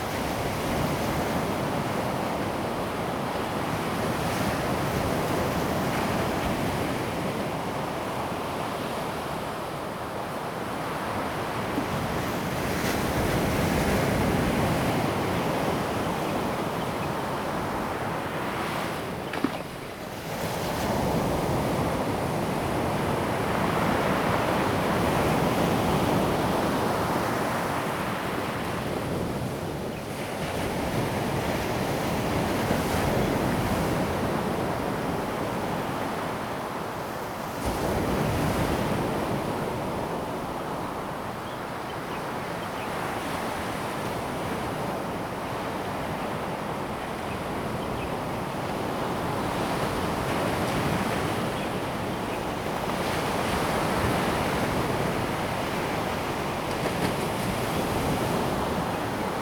南灣, Hengchun Township - At the beach
At the beach, Sound of the waves, Birds sound
Zoom H2n MS+XY
Hengchun Township, Pingtung County, Taiwan, 23 April